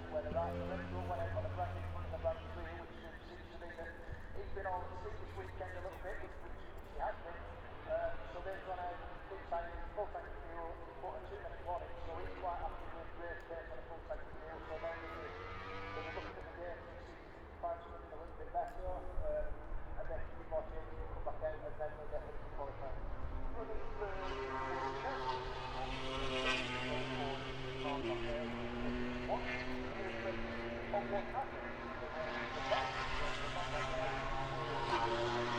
Silverstone Circuit, Towcester, UK - British Motorcycle Grand Prix 2018 ... moto grand prix ...
British Motorcycle Grand Prix 2018 ... moto grand prix ... free practice four ... maggotts ... lavalier mics clipped to baseball clap ...
25 August 2018, 14:05